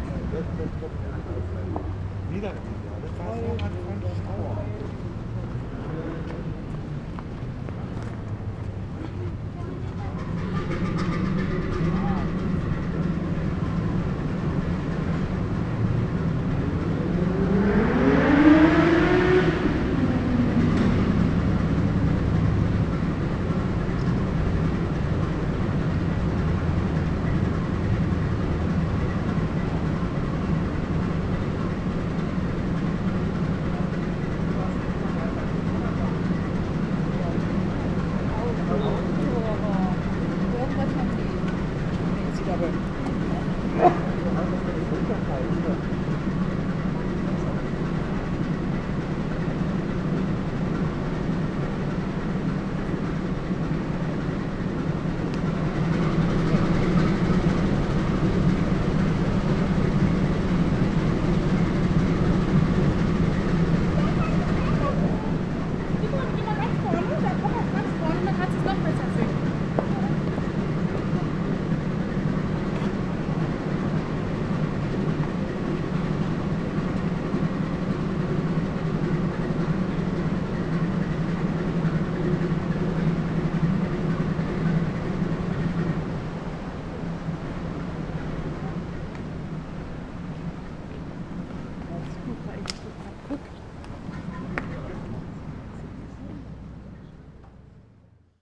Schiffshebewerk Niederfinow - the city, the country & me: ship enters boat lift
ship enters boat lift
the city, the country & me: september 5, 2010